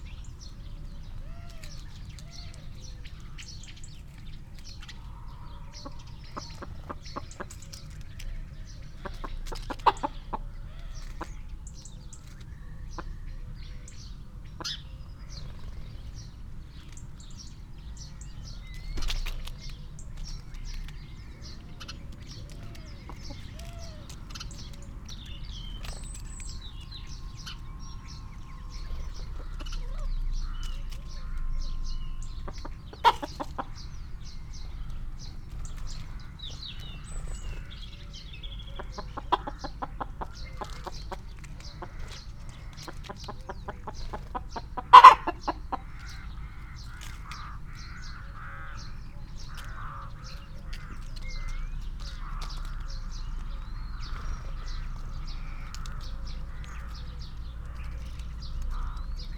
Luttons, UK - bird feeder soundscape ...
bird feeder soundscape ... SASS ... bird calls from ... robin ... house sparrow ... starling ... collared dove ... crow ... great tit ... blue tit ...wood pigeon ... dunnock ... rook ... background noise ...
Helperthorpe, Malton, UK, 25 December 2019, 08:30